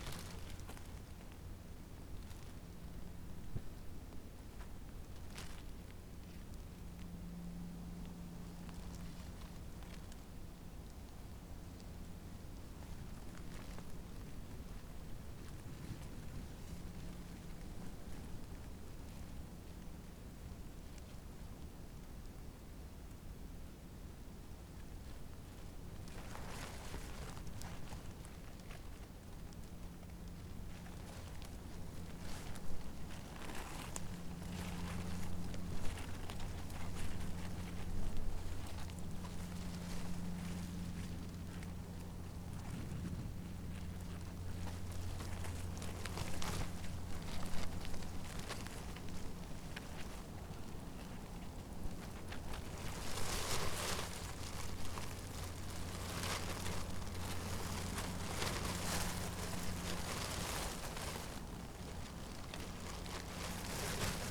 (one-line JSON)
{"title": "Lithuania, Vyzuoneles, cellophane and plane", "date": "2012-08-28 17:00:00", "description": "sound debris in a nature: cellophane in the wind and a plane in the sky...", "latitude": "55.51", "longitude": "25.52", "altitude": "136", "timezone": "Europe/Vilnius"}